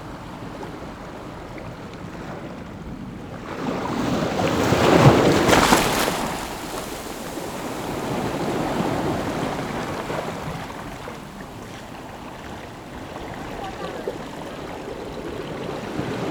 老梅海岸, 石門區, New Taipei City - The sound of the waves